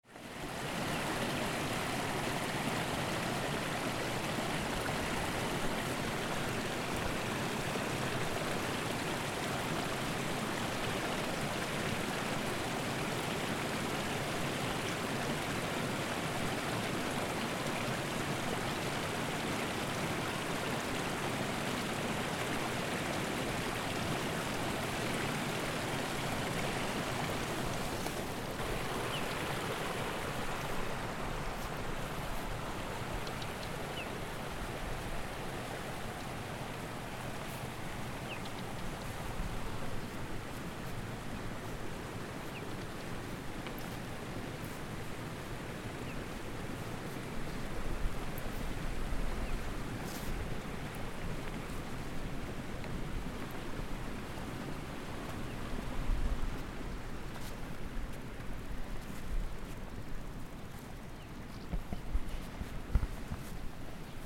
Wiese und Bach auf der Lauchernalp
Wiese und Bach auf der Alp, wenig Wind, Wetter durchzogen mit blauem Himmel, Mond erscheint so langsam
Wiler (Lötschen), Schweiz, 8 July 2011